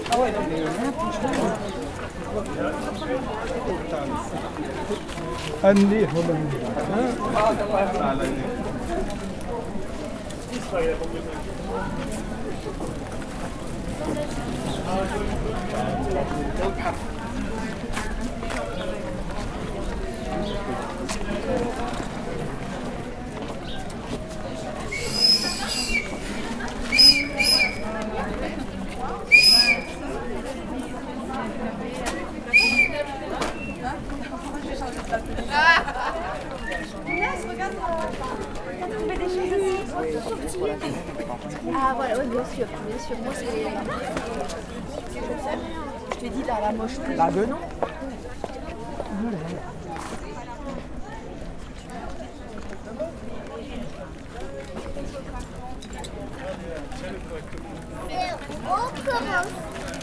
Wolfisheim, France - Wolfisheim Market
Marché aux puces Dimanche 1er Juin 2014, déambulation à travers le marché, enregistrement Zoom H4N